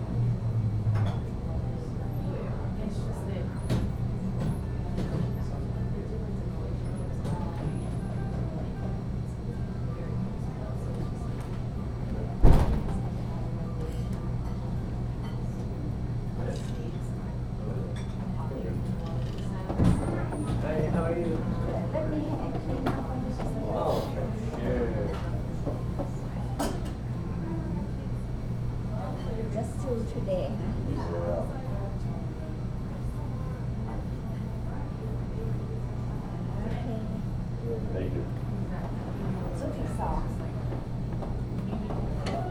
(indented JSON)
{
  "title": "neoscenes: Thai restaurant for lunch",
  "date": "2011-08-08 12:55:00",
  "latitude": "34.54",
  "longitude": "-112.47",
  "altitude": "1625",
  "timezone": "America/Phoenix"
}